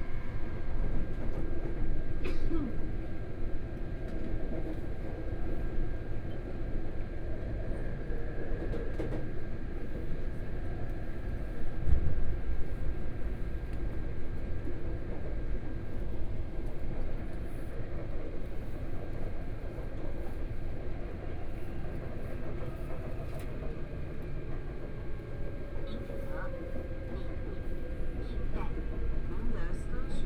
from Minquan West Road Statio. to Mingde Station, Binaural recordings, Zoom H4n + Soundman OKM II
Taipei City, Taiwan